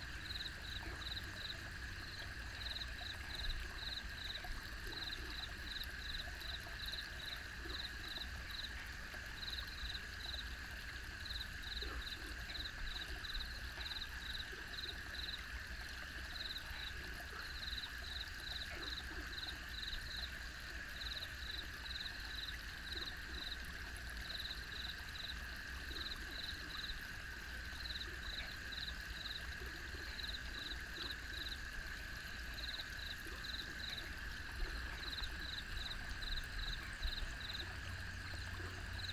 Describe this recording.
Frogs chirping, Flow sound, Insects called, Traffic Sound